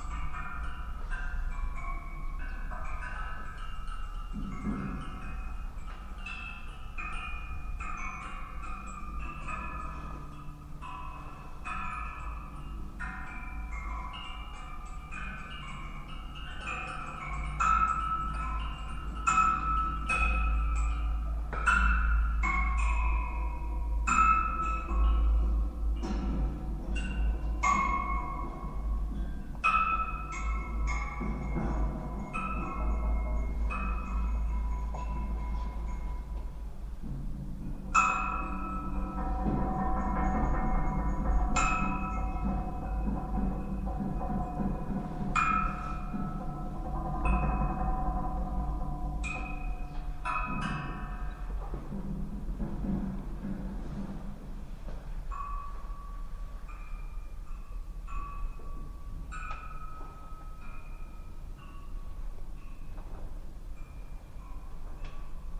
Telliskivi 60a musical coat rack
coat rack, ambient, xylophone-like sounds